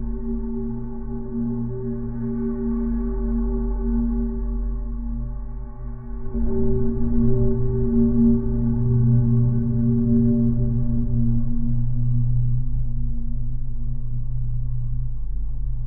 Bridge railings as drone source. Magnetic contact microphones.